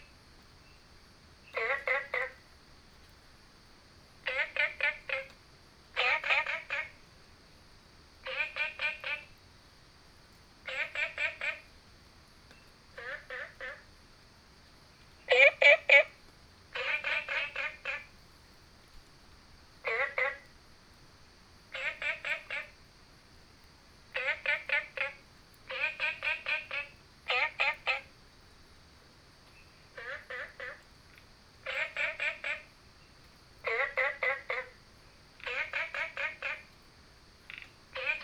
綠屋民宿, 桃米里 Taiwan - Frogs sound
Frogs sound, Small ecological pool